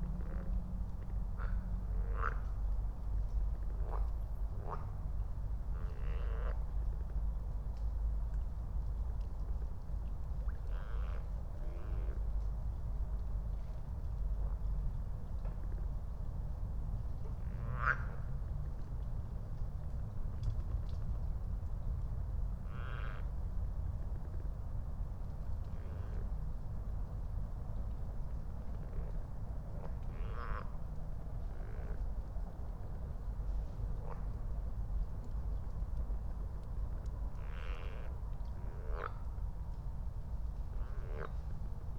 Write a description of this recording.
00:04 Berlin, Königsheide, Teich - pond ambience